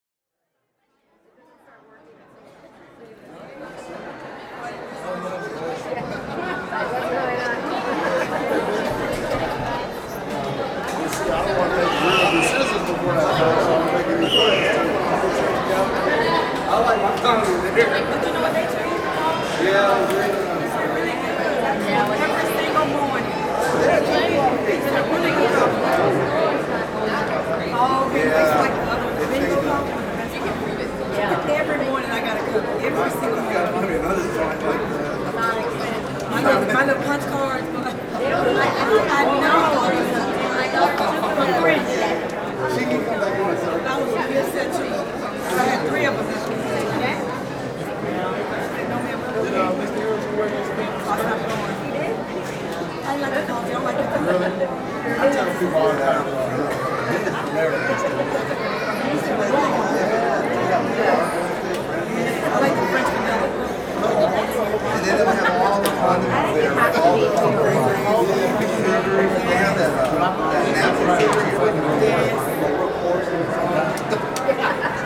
Polling Place People, Houston, Texas - polling place 2012, Holy Name Catholic Church, 1912 Marion St., 77009
*Binaural* 300 or so people crammed into a basketball gymnasium on the last day to vote early.
Church Audio CA14>Tascam DR100 MK2
TX, USA, 2 November 2012, 17:45